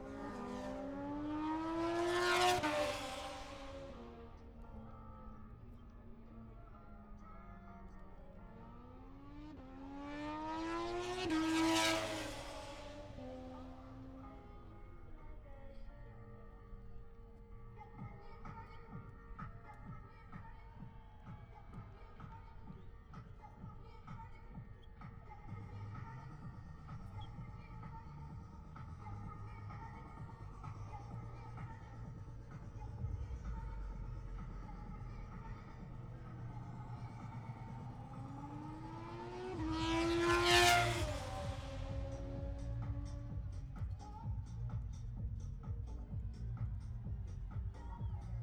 Towcester, UK - british motorcycle grand prix 2022 ... moto two ...
british motorcycle grand prix 2022 ... moto two free practice three ... dpa 4060s on t bar on tripod to zoom f6 ...
6 August 2022, ~11:00